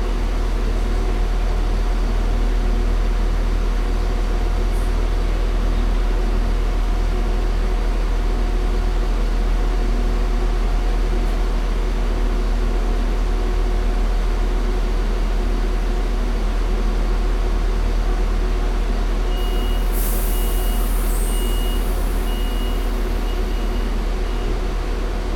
bahnhof lichtenberg, Berlin, germany - departure
take the night train to budapest.
on the platform.
2 x dpa 6060.